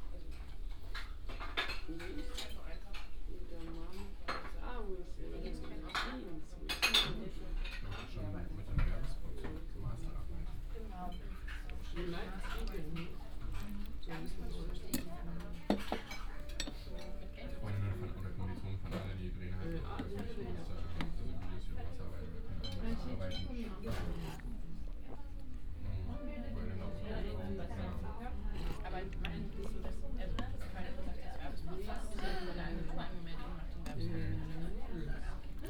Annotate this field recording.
tiny sushi bar Musashi, ambience at lunch time, (PCM D50, OKM2 binaural)